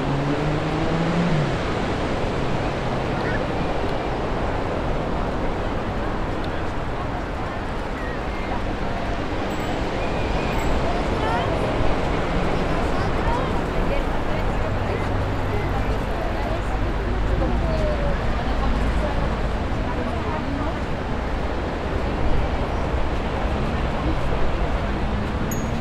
Kontxa Pasealekua, Donostia, Gipuzkoa, Espagne - CABINE ROYALE
CABINE ROYALE ST SEBASTIEN front of the océan
Captation ZOOM H6